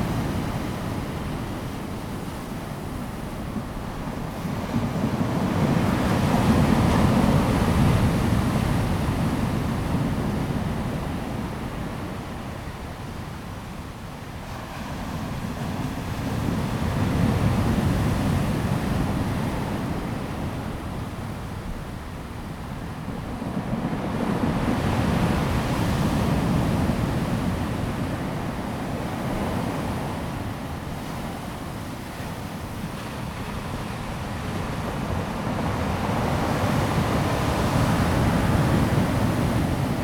{"title": "南迴公路 南興, Dawu Township - Sound of the waves", "date": "2018-03-23 13:15:00", "description": "at the seaside, Sound of the waves\nZoom H2n MS+XY", "latitude": "22.31", "longitude": "120.89", "altitude": "2", "timezone": "Asia/Taipei"}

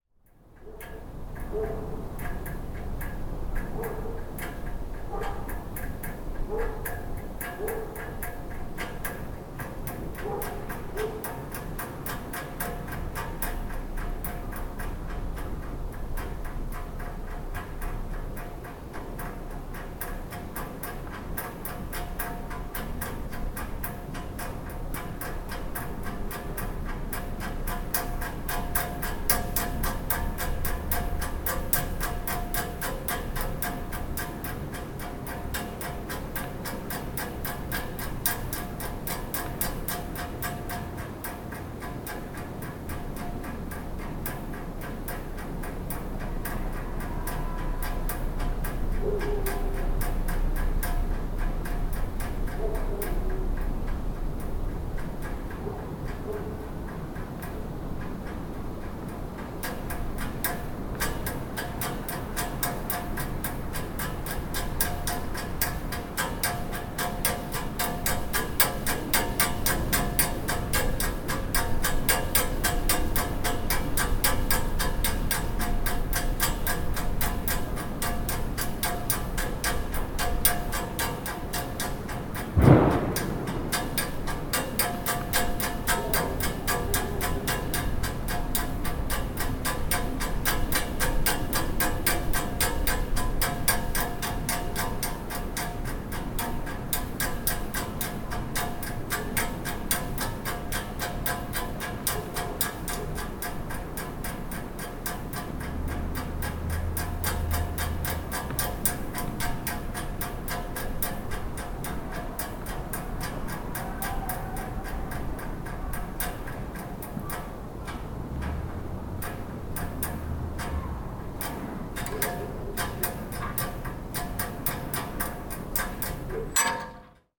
{"title": "Casimir Castle Hill, Przemyśl, Poland - (73 BI) Waving flags", "date": "2016-12-25 14:00:00", "description": "Binaural recording of a waving flag from the Casimir Castle, located on the Castle Hill.\nPosted by Katarzyna Trzeciak", "latitude": "49.78", "longitude": "22.77", "altitude": "260", "timezone": "Europe/Warsaw"}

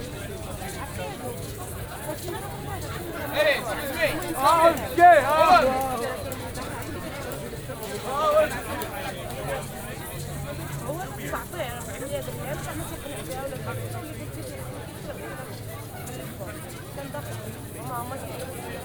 {"title": "Jemaa El Fna, Marrakesh - open restaurant chez Aische, ambience", "date": "2014-02-24 21:50:00", "description": "open restaurant area on place Jemaa El Fna, sound of steam and cooking pots, kitchen and restaurant ambience\n(Sony PCM D50, OKM2)", "latitude": "31.63", "longitude": "-7.99", "timezone": "Africa/Casablanca"}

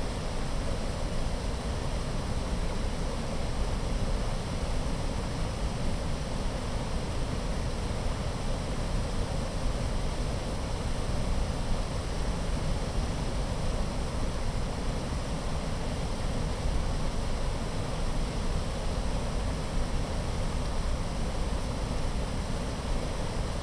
{"title": "Valici, Rjecina river, waterfall", "date": "2008-10-05 13:17:00", "description": "Waterfall on Rjecina river.", "latitude": "45.36", "longitude": "14.45", "altitude": "167", "timezone": "Europe/Zagreb"}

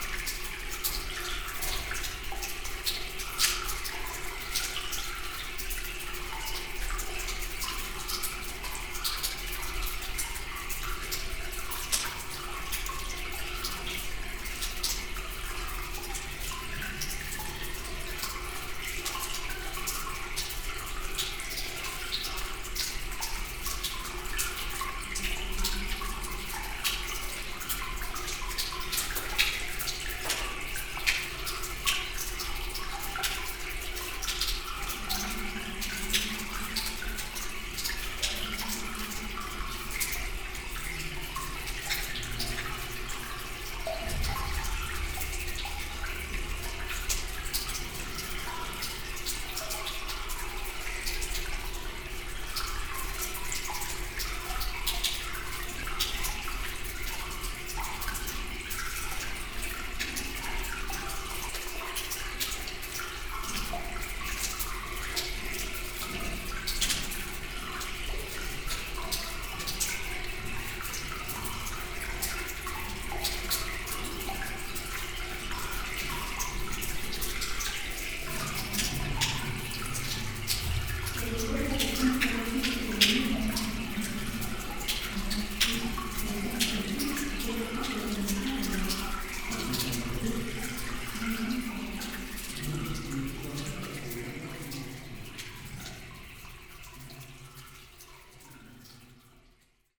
{"title": "Montagnole, France - Train tunnel", "date": "2017-06-05 13:30:00", "description": "Into an underground train tunnel, sound of water flowing into a 130 meters deep pit.", "latitude": "45.54", "longitude": "5.92", "altitude": "451", "timezone": "Europe/Paris"}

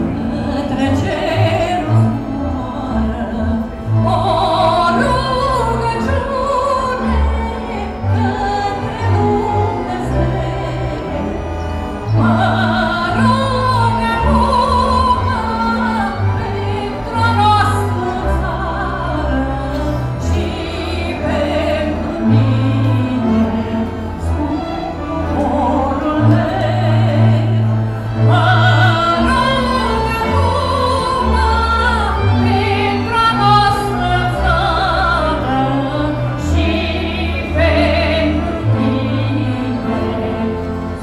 {"title": "Old Town, Klausenburg, Rumänien - Cluj, Cilelele Clujuli, main stage", "date": "2014-05-25 17:45:00", "description": "Behind the main stge of the Cluj City festivial Cilele 2014.\nThe sound of a traditional folklore band playing.\ninternational city scapes - field recordings and social ambiences", "latitude": "46.77", "longitude": "23.59", "altitude": "348", "timezone": "Europe/Bucharest"}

